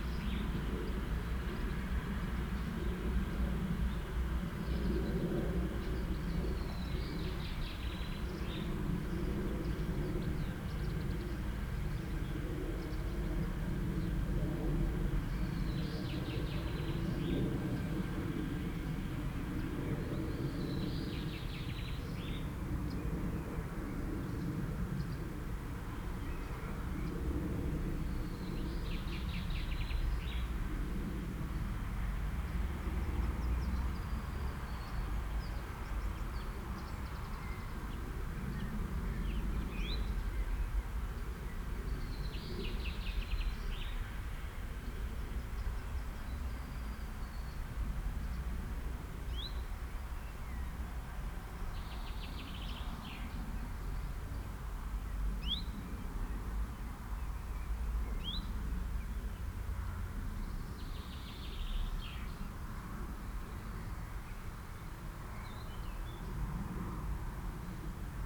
friedhof, binaural, listen with headphones! - friedhof, binaural
binaural, listen with headphones, friedhof niederlinxweiler, st.wendel cemetary, cemetiero, saarland, vögel, auto